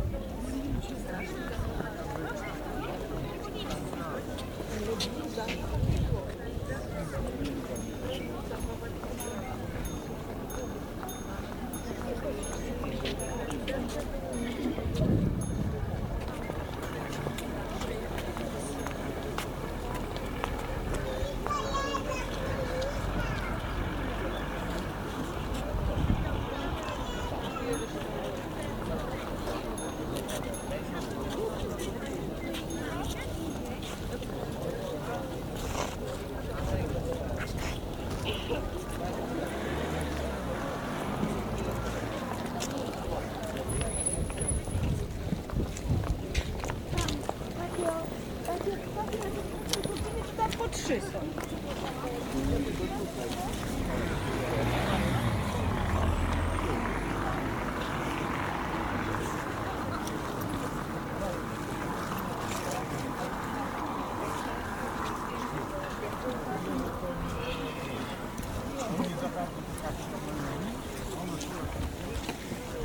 In front of the main cemetery gate.